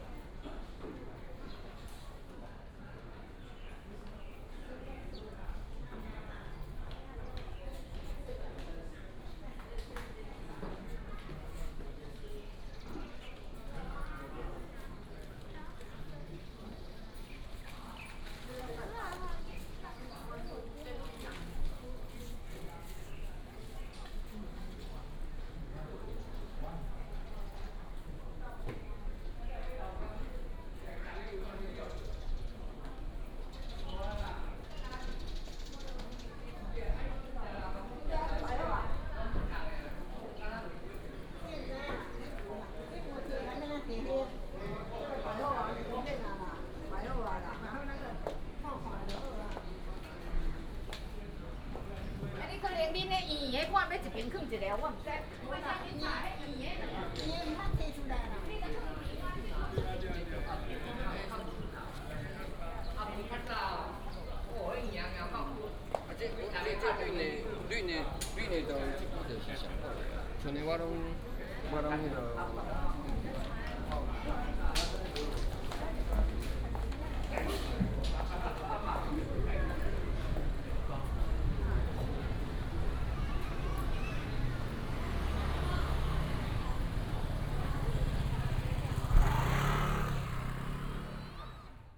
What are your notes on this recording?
Walking in the temple, Traffic sound, sound of birds